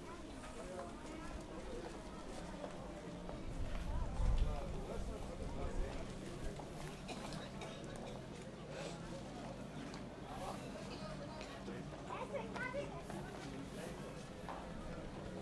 Sofia Market Walk
Walk over the Sofia Market, starting in a hall with playing children, stopping once and again for listening to chats by people meeting each other.
Sofia, Bulgaria, 5 April 2011, 14:30